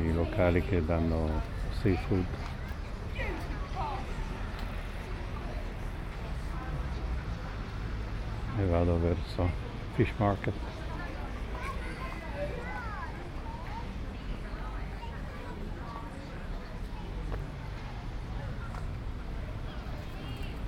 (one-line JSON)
{"title": "Unnamed Road, Folkestone, Regno Unito - GG Folkestone-Harbour-D 190524-h14-30", "date": "2019-05-24 14:30:00", "description": "Total time about 36 min: recording divided in 4 sections: A, B, C, D. Here is the fourth: D.", "latitude": "51.08", "longitude": "1.19", "altitude": "4", "timezone": "Europe/London"}